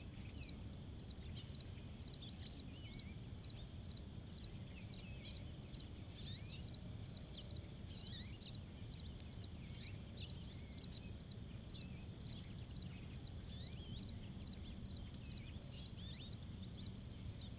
Morning sounds from a field overlooking the Meramec River.
Missouri, United States of America